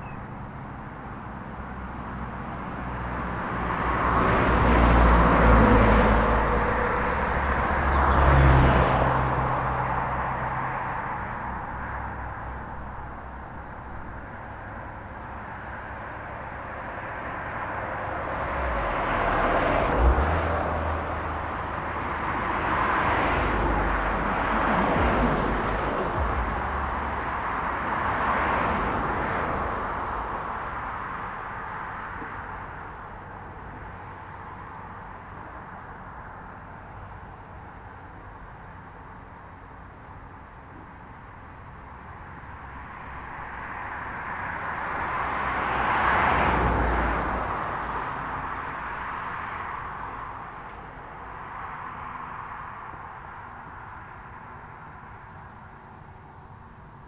Entrelacs, France - Circulation RD991
Au bord de la RD 991 près du lac du Bourget côté falaise, avec la chaleur les cigales sont très actives, passage de véhicules sur la route toute neuve .